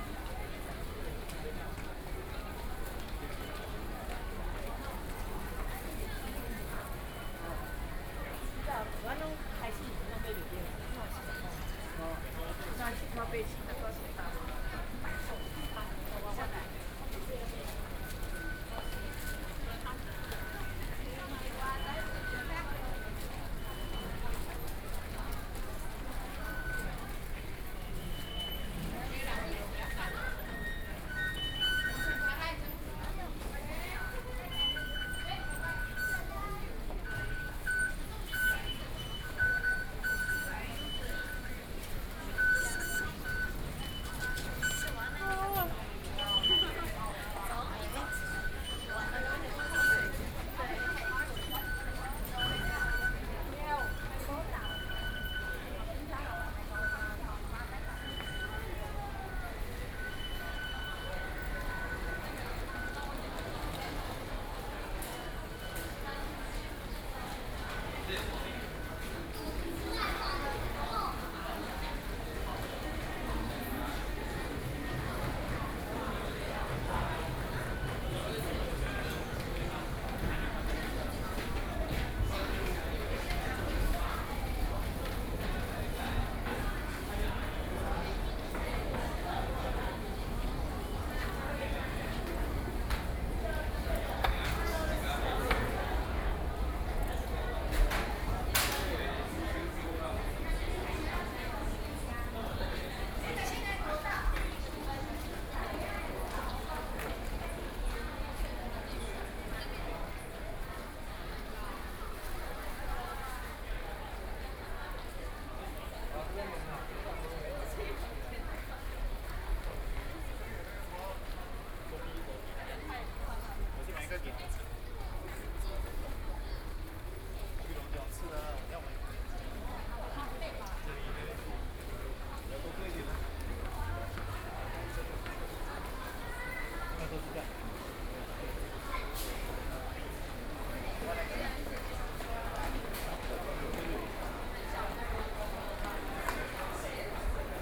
Taipei Main Station, Taiwan - Soundwalk
Taipei Main Station underground shopping street, from MRT station to underground shopping street, Sony PCM D50 + Soundman OKM II, Best with Headphone( SoundMap20130616- 1)
中正區 (Zhongzheng), 台北市 (Taipei City), 中華民國, 16 June 2013, ~16:00